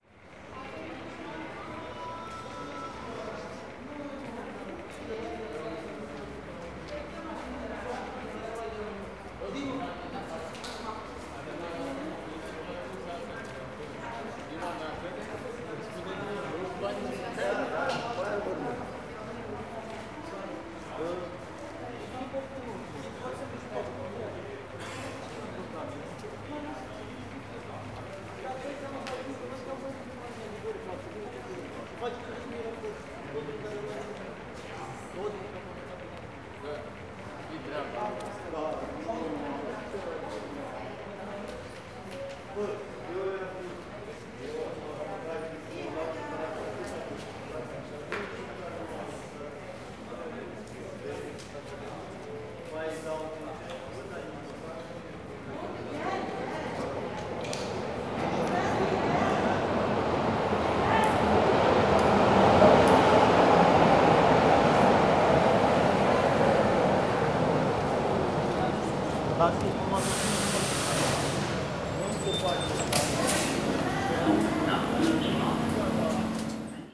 Berlin, Kreuzberg, Möckernbrücke station - escalaotr
while waiting on the platform i notice a rattle filling the station, nicely reverberated off the walls and roof. the escalator on the other side of the tracks was making the sound. talks of the passengers, train arrives.
Berlin, Germany